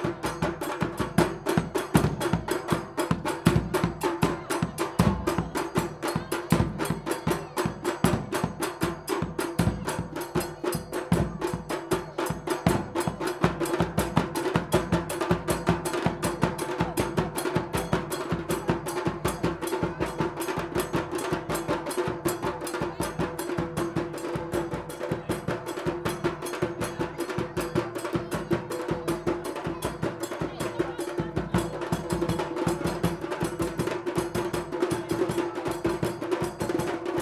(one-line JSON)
{"title": "Rond de Energiecentrale, Segbroek, The Netherlands - Brassband tijdens Buurtfeest De Verademing", "date": "2012-05-12 14:42:00", "latitude": "52.07", "longitude": "4.29", "altitude": "1", "timezone": "Europe/Amsterdam"}